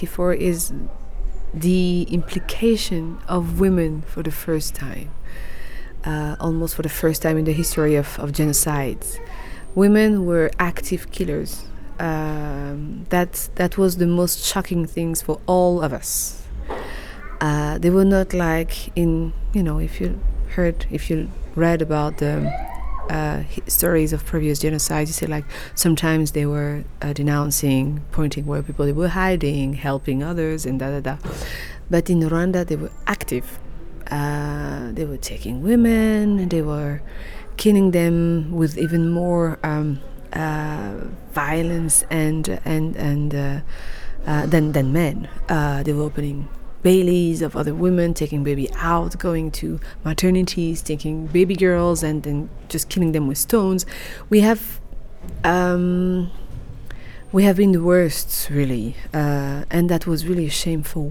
City Library, Hamm, Germany - Rwanda is a women’s country …
The noise of the bus terminal outside the library got a bit overwhelming… so we pulled to chairs in a far away corner of the library and continued with our conversation. Here, Carole tells us about the 1994 Genocide in Rwanda from a woman's perspective…
We are with the actor Carole Karemera from Kigali, Rwanda was recorded in Germany, in the city library of Hamm, the Heinrich-von-Kleist-Forum. Carole and her team of actors from the Ishyo Art Centre had come to town for a week as guests of the Helios Children Theatre and the “hellwach” (bright-awake) 6th International Theatre Festival for young audiences.
Carole’s entire footage interview is archived here: